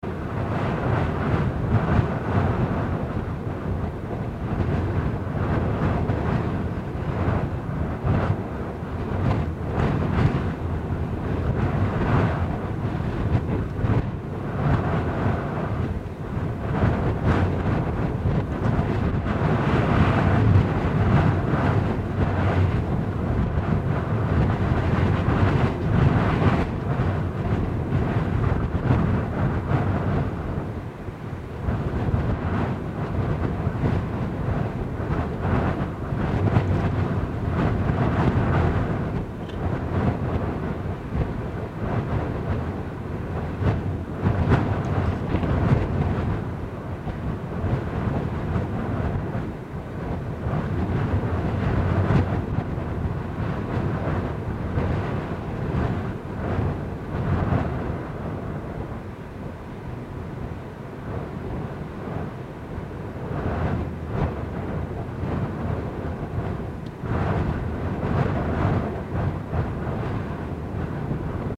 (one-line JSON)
{"title": "Digulleville, France - Wind thru window van", "date": "2015-12-08 16:30:00", "description": "Wind thru window's van, Zoom H6", "latitude": "49.72", "longitude": "-1.85", "altitude": "5", "timezone": "Europe/Paris"}